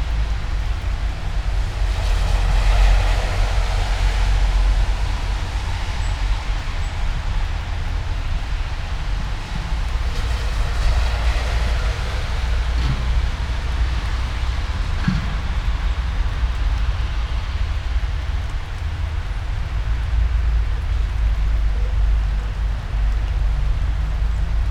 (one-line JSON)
{"title": "all the mornings of the ... - aug 14 2013 wednesday 07:11", "date": "2013-08-14 07:11:00", "latitude": "46.56", "longitude": "15.65", "altitude": "285", "timezone": "Europe/Ljubljana"}